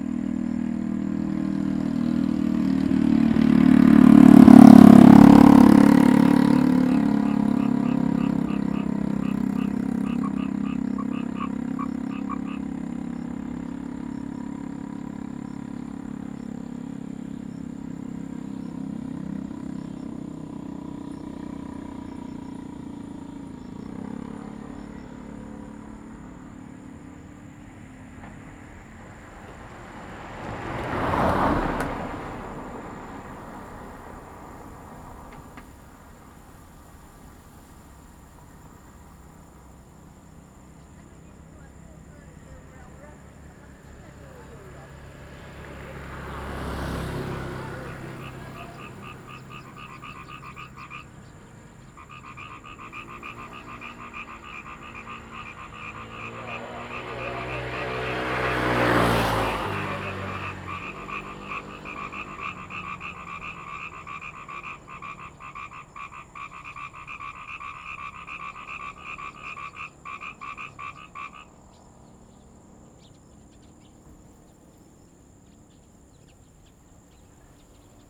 椰油村, Koto island - Frogs and Traffic Sound
Frogs sound, Traffic Sound
Zoom H2n MS +XY
Lanyu Township, Taitung County, Taiwan, 30 October 2014, 07:56